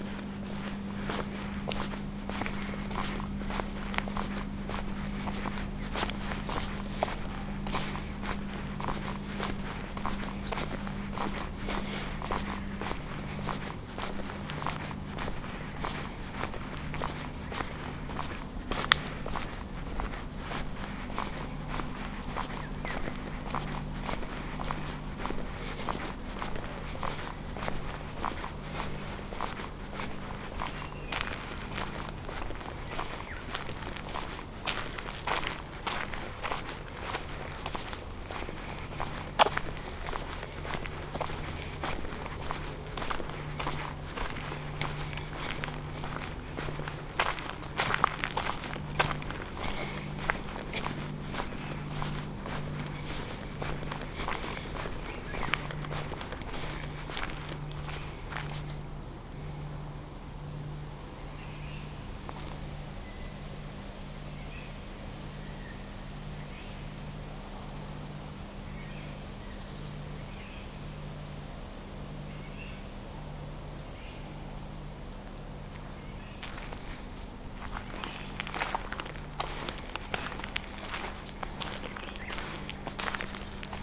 вулиця Лівобережна, Костянтинівка, Донецька область, Украина - Промзона Автостекло
Заброшенная территория бывшего завода Автостекло: зеленая зона с руинами зданий и мусором, поросшая кустарниками и деревьями. Есть возможность переправится на другой берег реки Кривой Торец
Запись: Zoom H2n